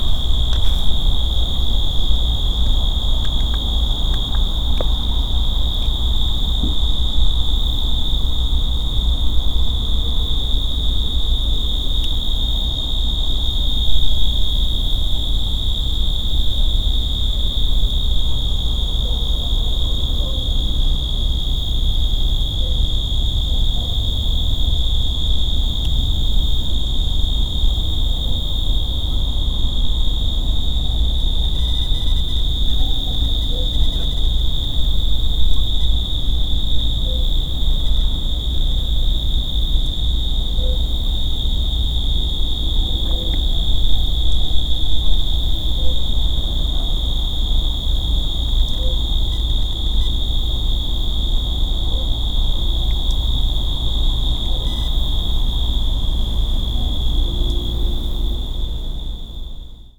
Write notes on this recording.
Noises on Cerro Gordo at half past nine at night. (Urban noise, crickets, air, distant dogs and some interference among other things.) I made this recording on April 18th, 2019, at 9:27 p.m. I used a Tascam DR-05X with its built-in microphones and a Tascam WS-11 windshield. Original Recording: Type: Stereo, Ruidos en el Cerro Gordo a las nueve y media de la noche. (Ruidos urbanos, grillos, aire, perros lejanos y un poco de interferencias entre otras cosas.) Esta grabación la hice el 18 de abril 2019 a las 21:27 horas.